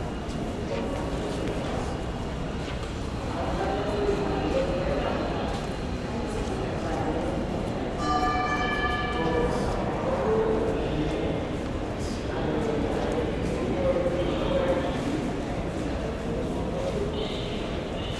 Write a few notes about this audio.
Ambience at Delancey Street/Essex Street station train, passengers are waiting for the F train to arrive.